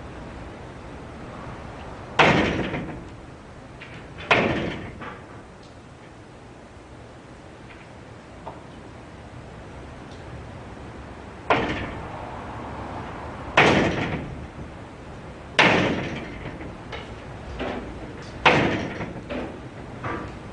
Court-St.-Étienne, Belgique - The Sambree farm
The Sambree farm, when this place was abandoned. This is during a tempest, doors bang everywhere and this is quite baleful !
Court-St.-Étienne, Belgium, 29 October 2007